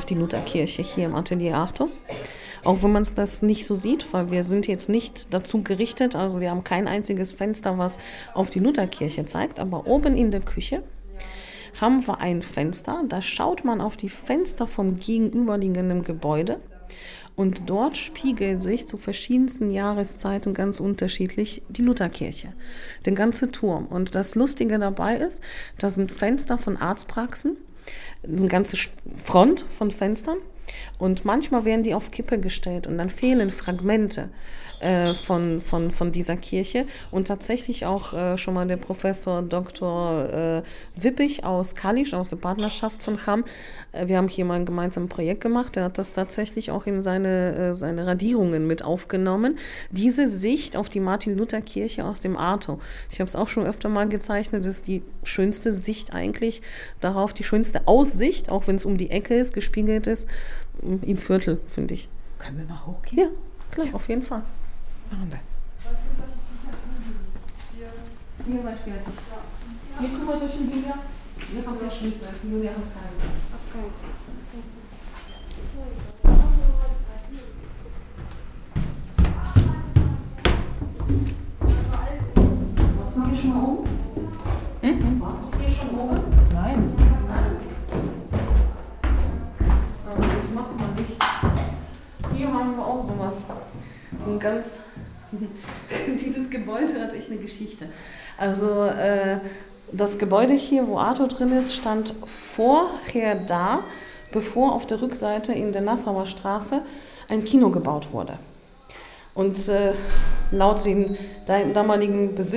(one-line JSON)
{"title": "Atelier ARTO, Hamm, Germany - The most beautiful view...", "date": "2014-09-23 12:11:00", "description": "Anna Huebsch takes us on a guided tour through Atelier ARTO, up the stairs, to hidden places, and brigged up windows of the former print workshop… to “see” the best view on the Lutherkirche from ARTO’s upstairs kitchen…. (a “cubist” reflection in an all-glass house front)…\nAnna Hübsch führt uns durch Atelier ARTO, die Holztreppe herauf, zu verborgenen Nischen, und zugemauerten Fenstern in was war ehemals eine Druckereiwerkstatt… Sie führt uns an ein Fenster zum Hof, von dem man den besten Ausblick “auf die Lutherkirche” bewundern kann…\nTo hear more about ARTO, the activities, the stories and histories continue listening here:", "latitude": "51.68", "longitude": "7.82", "altitude": "65", "timezone": "Europe/Berlin"}